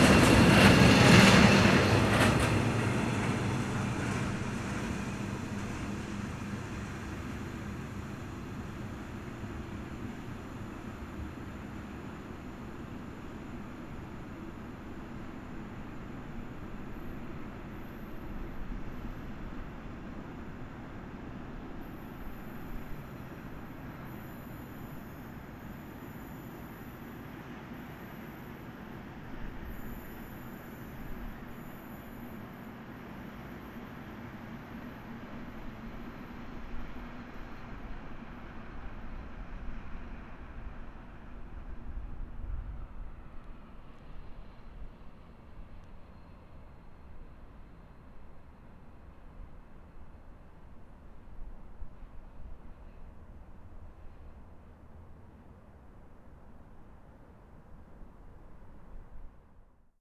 Köln West, freight train
freight train at night, station köln west. these trains can be heard all night in this area.